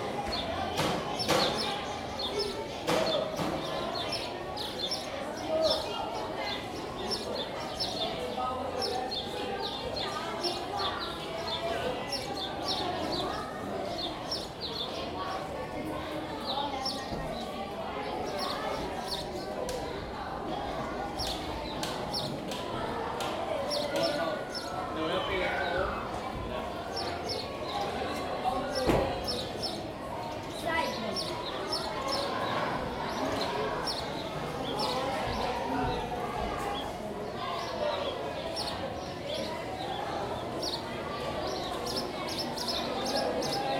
Chigorodó, Chigorodó, Antioquia, Colombia - Colegio Laura Montoya en jornada escolar
Students having break between classes in the Laura Montoya School.
Recorder: Zoom H2n XY technique